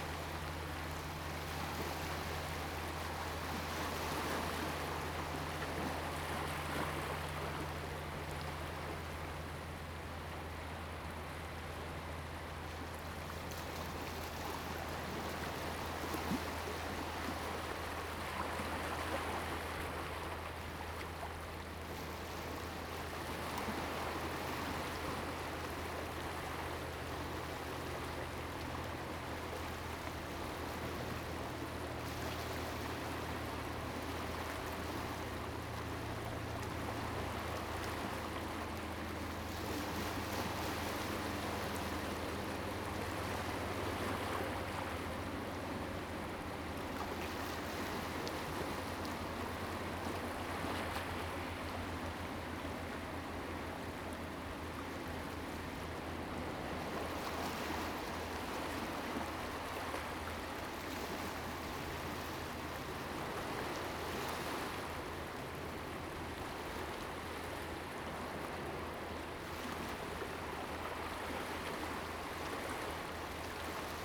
Huxi Township, Penghu County - waves and Tide
At the beach, sound of the Waves
Zoom H2n MS+XY
Huxi Township, Penghu County, Taiwan, 21 October 2014